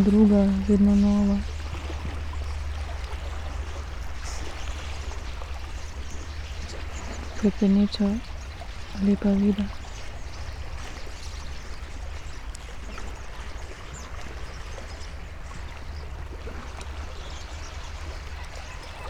pier, Novigrad, Croatia - still poem
variation on Lepa Vida